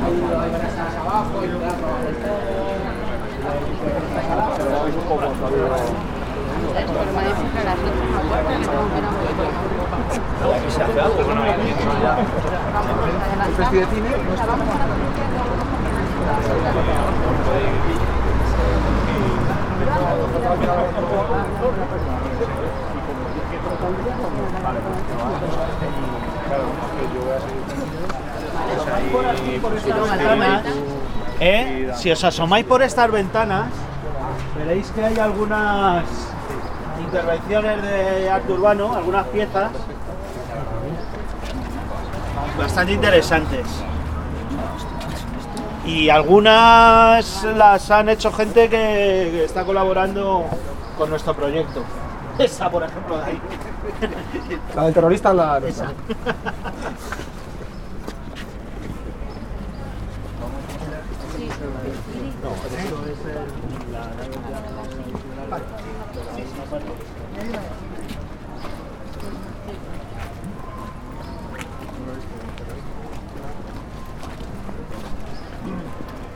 Pacífico, Madrid, Madrid, Spain - Pacífico Puente Abierto - Transecto - 10 - Calle Cocheras. Hablando con Alejandro de radios y de fútbol
Pacífico Puente Abierto - Calle Cocheras. Hablando con Alejandro de radios y de fútbol